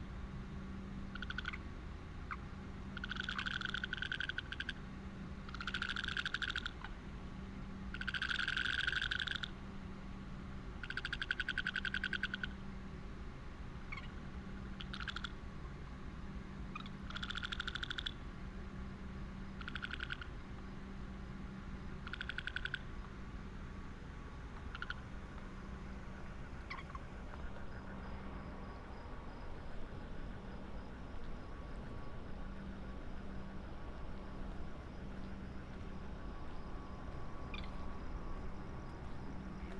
varanasi: by the ghats - ghat walk during black out
a night walk along the ghats during a black out - the frogs kept me company, some sadus by their fires and fire works... march 2008